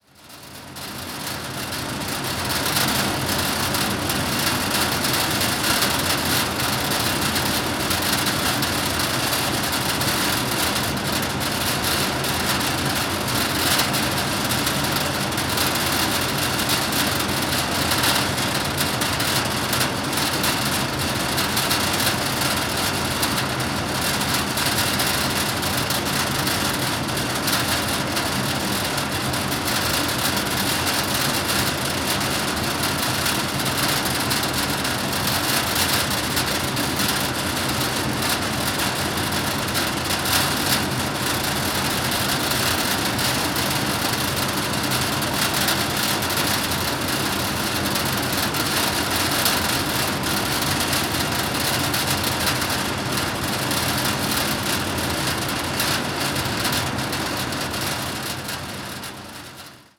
Grenzach-Wyhlen, Kraftwerk, north bank - window grating
window grating trembling as the huge turbines shake up the whole building of the hydro plant.